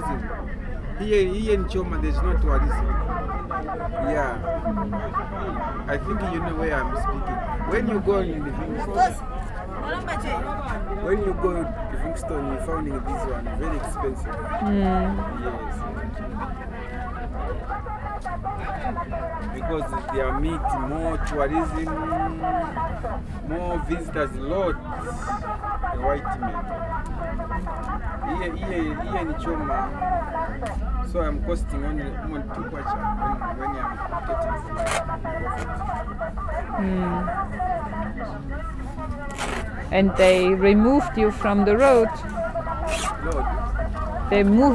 Street Market, Choma, Zambia - Chitenge trader
...continuing my stroll among the Chitenge traders... chatting...
Southern Province, Zambia, 13 August 2018